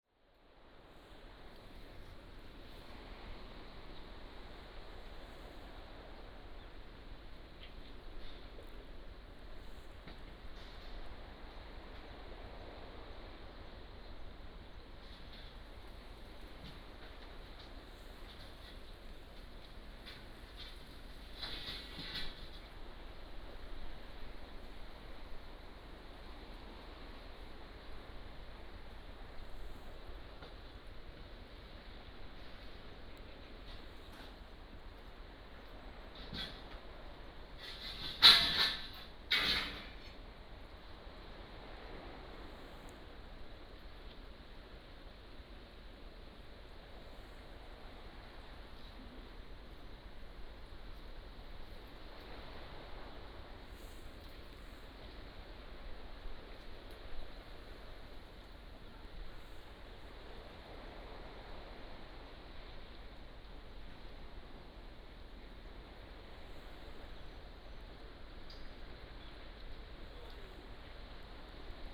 夫人村, Nangan Township - Near the sea
Sound of the waves, Housing renovation, Standing on the rocky shore